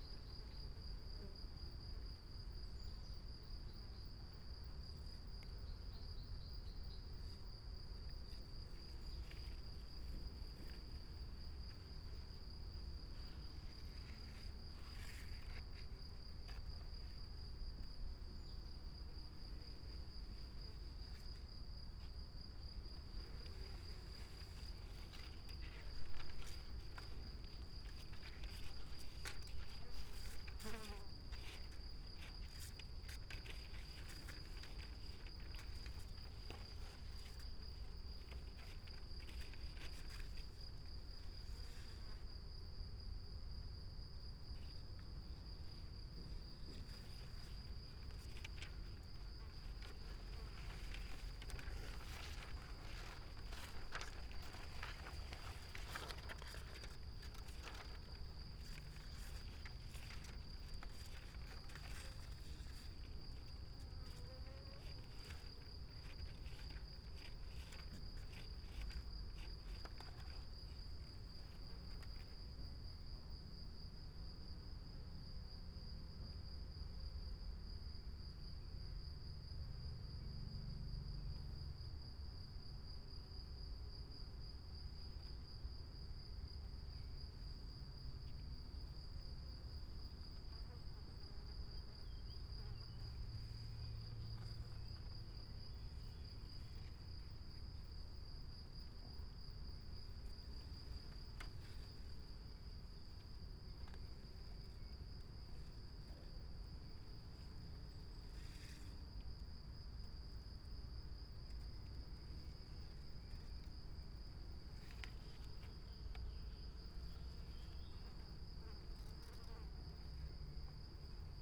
acacia tree, Piramida, Slovenia - listening to paper, moved by wind
strips of paper on the branches of acacia in bloom, spoken words, crickets, meadow, flies, winds sounding strips of paper
Vzhodna Slovenija, Slovenija, June 2013